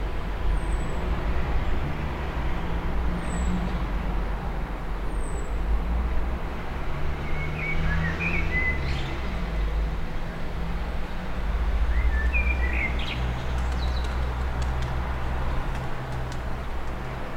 Pl. du Chanoine Philippe Ravary, Toulouse, France - Airplaine
just a quiet place ?
Captation : ZOOMH6
France métropolitaine, France, 18 April, 16:30